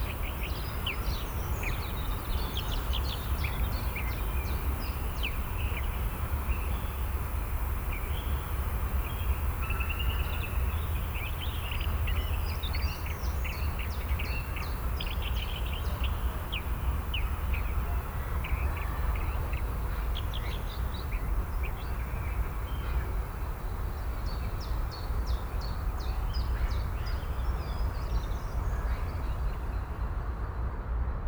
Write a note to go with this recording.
Im Gruga Park Gelände am Pergola Garten nahe der Skulptur Kindergruppe von Heinrich Adolfs. Die Klänge der Vogelstimmen, ein Flugzeug kreuzt den Himmel an einem kühlen Frühjahrstag. Inside the Gruga Park at the Pergola garden near the sculpture child group by Heinrich Adolfs. The sound of the bird voices and a plane crossing the sky. Projekt - Stadtklang//: Hörorte - topographic field recordings and social ambiences